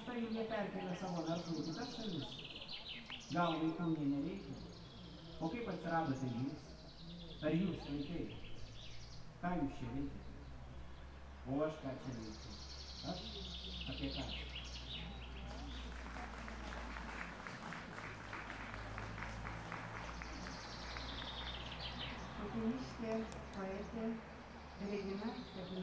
Sudeikiai, Lithuania, poetry reading, birds
the churchyard. international poetry festival.
Utena district municipality, Lithuania